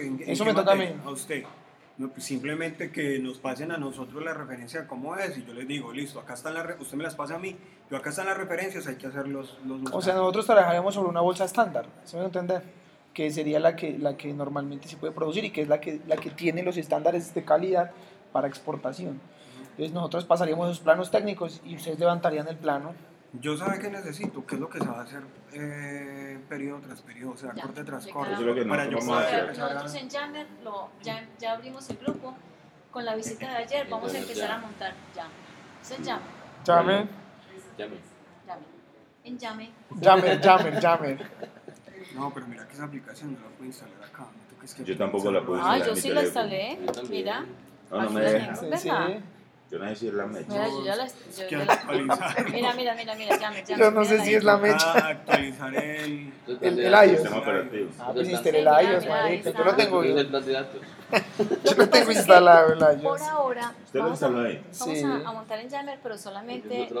Cl., Armenia, Quindío, Colombia - Una tarde en la IU EAM
docentes hablando de proyecto integrador, relacionado con el café
18 July 2018, 15:31